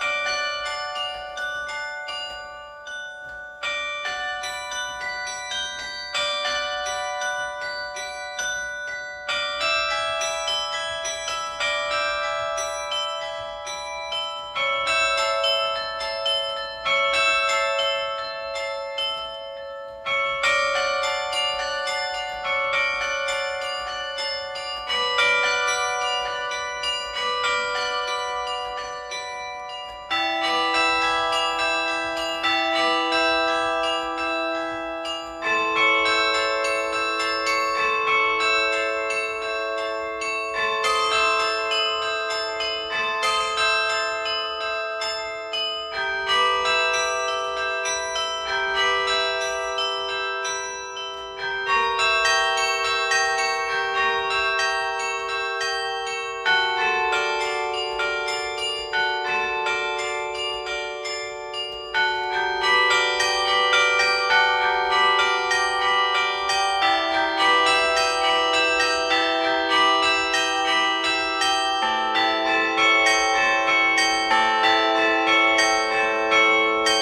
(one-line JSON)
{"title": "Pl. du Général Leclerc, Avesnes-sur-Helpe, France - Carillon - Avesnes-sur-Helpe", "date": "2020-06-24 15:00:00", "description": "Avesnes-sur-Helpe - Département du Nord\nCarillon - église d'Avesnes\nMaître carillonneur : Monsieur Nimal", "latitude": "50.12", "longitude": "3.93", "altitude": "178", "timezone": "Europe/Paris"}